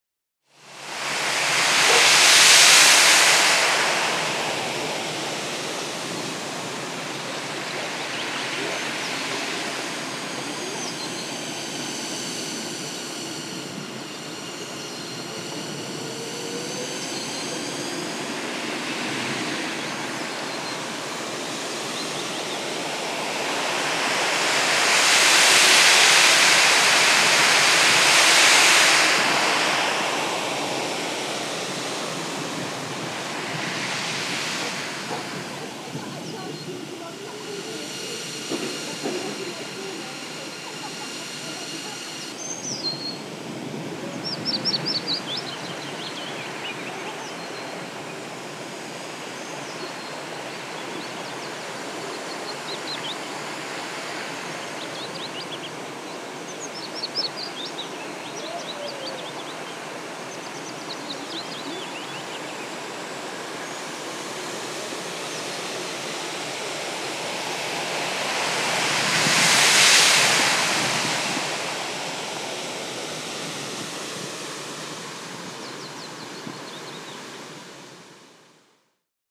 Walking Holme Phasing
Panning a parabolic mic over the reservoir and outflow.
Holme, Kirklees, UK, April 2011